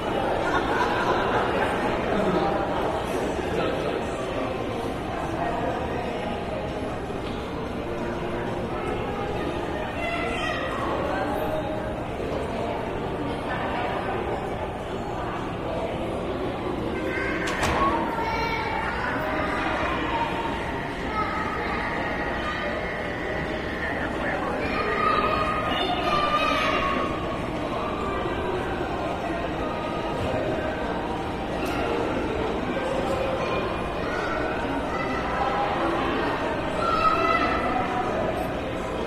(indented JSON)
{
  "title": "St. Matthews, Louisville, KY, USA - Consuming",
  "date": "2013-11-13 15:00:00",
  "description": "Next to rides for children inside of a shopping mall. Shoppers passed by and children played nearby.\nRecorded on a Zoom H4n.",
  "latitude": "38.25",
  "longitude": "-85.61",
  "altitude": "169",
  "timezone": "America/Kentucky/Louisville"
}